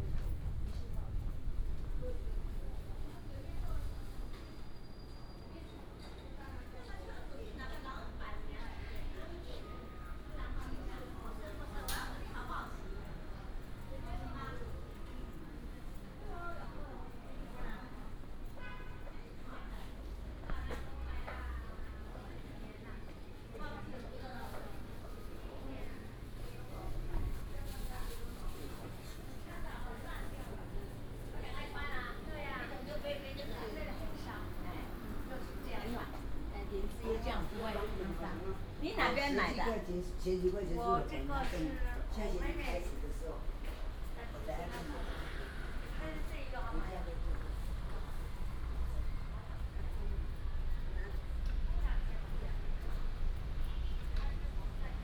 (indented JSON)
{
  "title": "楊梅大成公有市場, Yangmei Dist. - Old market",
  "date": "2017-01-18 12:36:00",
  "description": "walking in the alley, Through the old market, Traffic sound",
  "latitude": "24.91",
  "longitude": "121.15",
  "altitude": "165",
  "timezone": "Asia/Taipei"
}